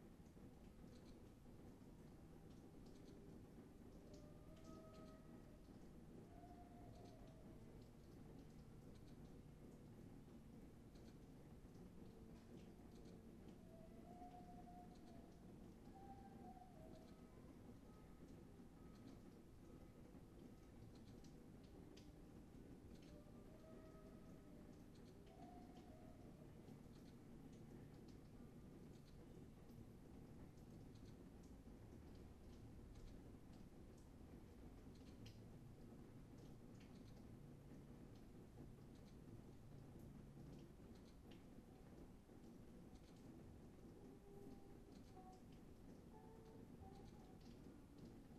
Mountain blvd. Oakland - rain and alarm clock
listening to a rain and alarm clock
*** from Js office